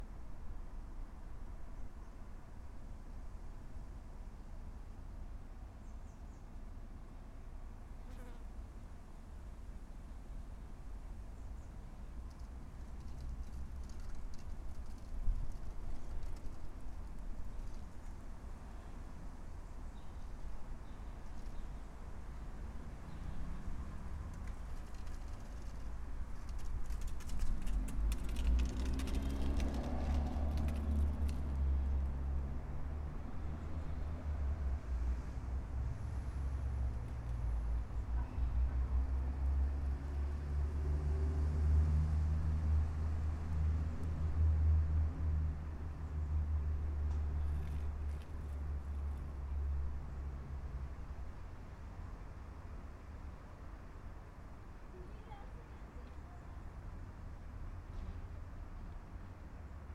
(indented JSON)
{
  "title": "Ulica Moše Pijade, Maribor, Slovenia - corners for one minute",
  "date": "2012-08-08 15:20:00",
  "description": "one minute for this corner - ulica moše pijade, yard, by the wooden garage box",
  "latitude": "46.55",
  "longitude": "15.64",
  "altitude": "281",
  "timezone": "Europe/Ljubljana"
}